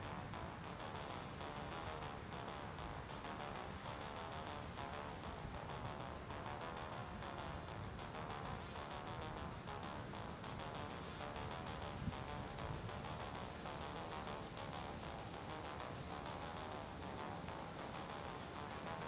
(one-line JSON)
{"title": "Washington Park, South Doctor Martin Luther King Junior Drive, Chicago, IL, USA - In Washington Park with Sequencer", "date": "2012-11-05 02:30:00", "description": "Recorded with in ear binaural microphones Washington park while using sequencer app for smart phone", "latitude": "41.79", "longitude": "-87.61", "altitude": "185", "timezone": "America/Chicago"}